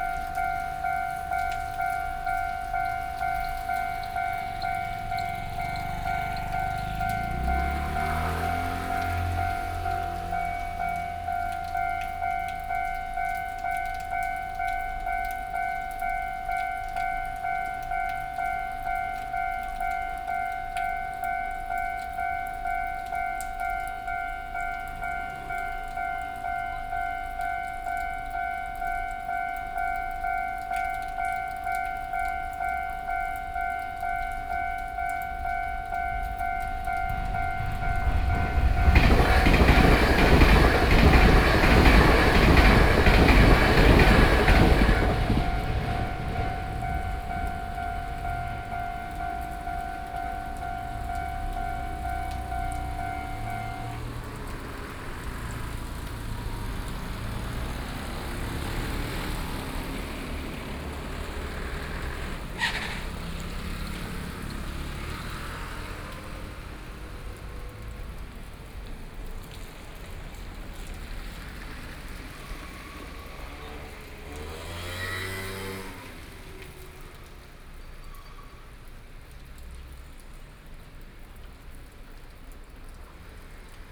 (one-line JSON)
{"title": "Nanchang St., Luodong Township - Rainy Day", "date": "2013-11-07 09:57:00", "description": "Standing in front of a railroad crossing, The traffic sounds, Train traveling through, Binaural recordings, Zoom H4n+ Soundman OKM II", "latitude": "24.67", "longitude": "121.77", "altitude": "8", "timezone": "Asia/Taipei"}